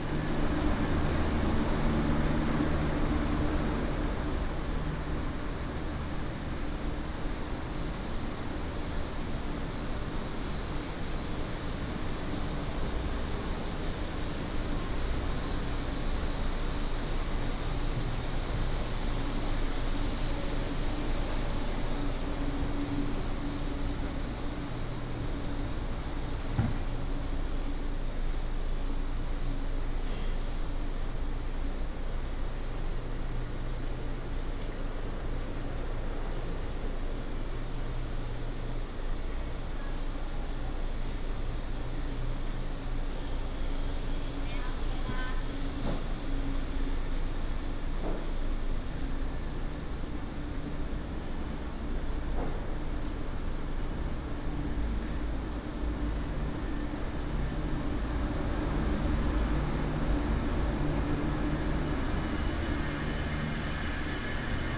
{"title": "永安漁港, Xinwu Dist. - restaurant and market", "date": "2017-07-26 09:10:00", "description": "walking in the Sightseeing restaurant market, Is preparing for business", "latitude": "24.99", "longitude": "121.02", "timezone": "Asia/Taipei"}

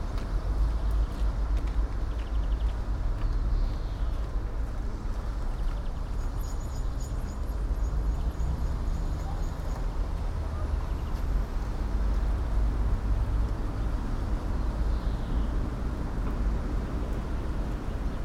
{"title": "all the mornings of the ... - mar 15 2013 fri", "date": "2013-03-15 07:57:00", "latitude": "46.56", "longitude": "15.65", "altitude": "285", "timezone": "Europe/Ljubljana"}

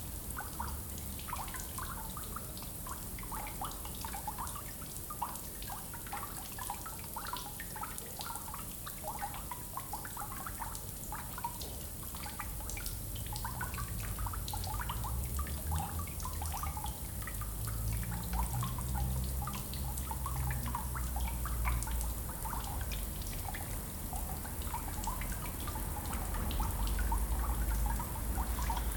{"title": "Belfast, Belfast, Reino Unido - Singing pipe", "date": "2013-11-20 13:32:00", "description": "At the back of the engineering building at Queen's, an abandoned pipe modulates the dialogue between a leaking hose and the street.\nZoom H2n in XY setup", "latitude": "54.58", "longitude": "-5.94", "altitude": "23", "timezone": "Europe/London"}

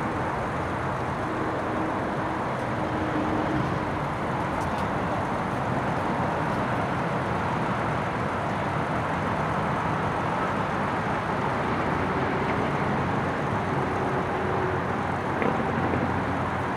{"title": "Rest Area No. 22 I-75 Southbound, Monroe County, GA, USA - Rest Stop Ambiance", "date": "2021-12-23 12:41:00", "description": "A recording of a rest stop in which cars and trucks can be heard pulling in and out. Given the close proximity to the highway, the roar of traffic is constant. Some minor processing was done in post.\n[Tascam Dr-100mkiii, on-board uni mics]", "latitude": "32.97", "longitude": "-83.83", "altitude": "148", "timezone": "America/New_York"}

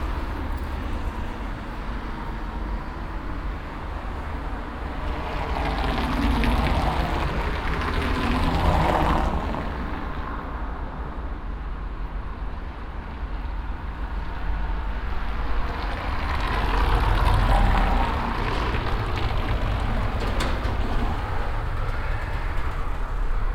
{"title": "dresden, königsbrücker straße, traffic on cobble stone street", "date": "2009-06-16 12:59:00", "description": "traffic passing by on a half asphalt and cobble stone street\nsoundmap d: social ambiences/ in & outdoor topographic field recordings", "latitude": "51.07", "longitude": "13.75", "altitude": "116", "timezone": "Europe/Berlin"}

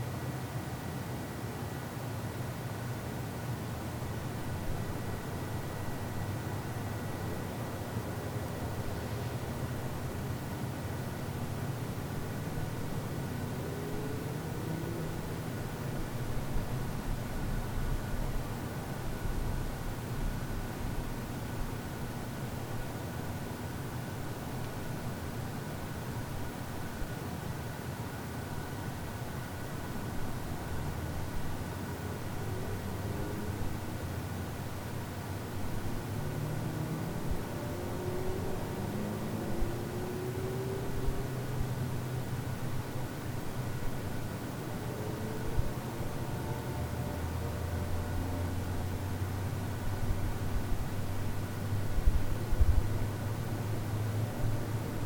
{"title": "Wind & Tide Playground - Frogs", "date": "2020-04-11 23:39:00", "description": "I discovered a couple nights ago that a frog chorus starts up around midnight each night, somewhere in the swampy overgrowth across the street — and mysteriously pauses now and then. It’s impossible to tell exactly where it originates, so the cover photo was taken in the general vicinity, in the daylight.\nI would've maybe never discovered this were it not for COVID-19, which closed down my health club, which means I've been occasionally staying up late instead of swimming first thing in the morning. Who knows how long this has been going on?\nMajor Elements:\n* Intermittent frog chorus\n* Distant cars and motorcycles\n* Airplanes\n* Distant train\n* One close car driving past, stopping, and turning around\n* Rare midnight birds", "latitude": "47.88", "longitude": "-122.32", "altitude": "120", "timezone": "America/Los_Angeles"}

